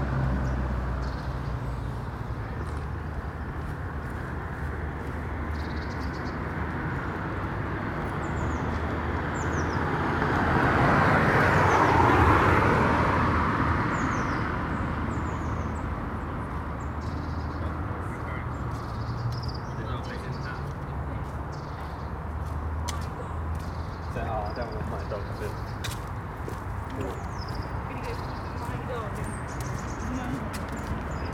Contención Island Day 46 inner northeast - Walking to the sounds of Contención Island Day 46 Friday February 19th
The Drive West Avenue Moorfield Moor Road South
Roadworks stop/start the traffic
A steady drift of people
from the coffee van
Rooftops of moss-grown tiles
A cupola
pagoda style
with a weathervane